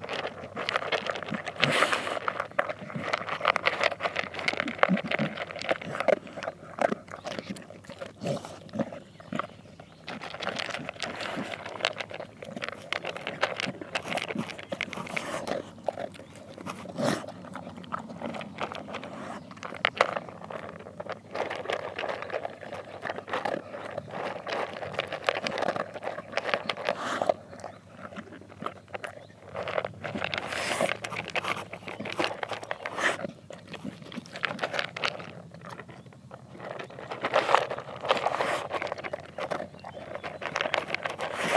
Court-St.-Étienne, Belgique - Dog eating
In the all-animals-eating collection, this is the time of the dog. On a bright sunday morning, Bingo the dog eats its food. It makes a lot of cronch-cronch, but also some pffff and burp... I have to precise this dog is completely crazed !